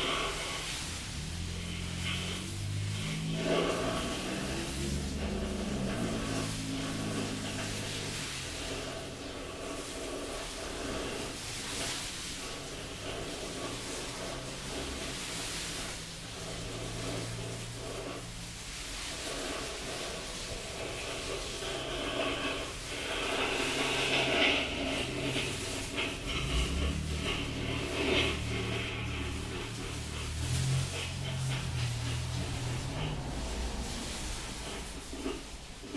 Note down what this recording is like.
Rubbing ash from a house fire. Two condenser mics and a contact mic through a bullhorn.